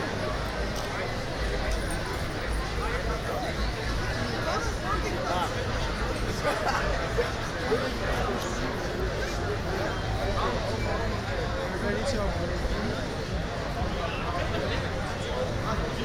A walk through the city (part 6 - nightlife) - The Hague Nightlife
A walk through the city center on Saturday evening. (Mainly recorded on Plein). Binaural recording.